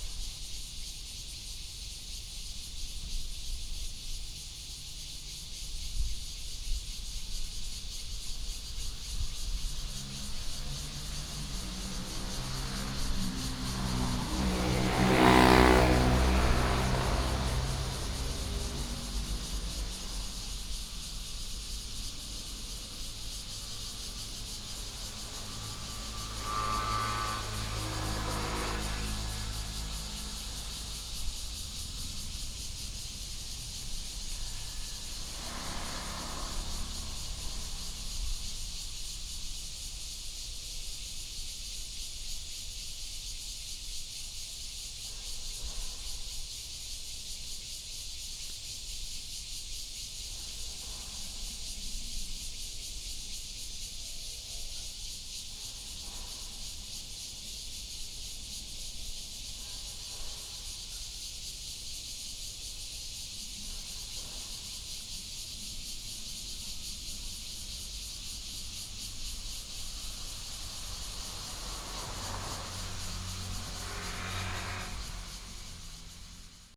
洪圳路, Pingzhen Dist., Taoyuan City - Cicadas
Cicadas, Factory sound, traffic sound, Binaural recordings, Sony PCM D100+ Soundman OKM II